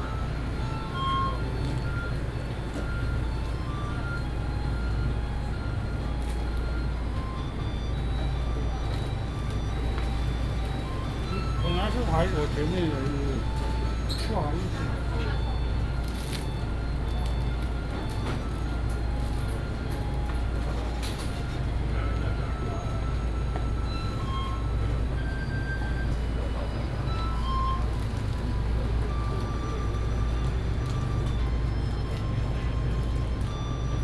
鹿鳴堂, National Taiwan University - Walking into the convenience store
Walking into the convenience store, At the university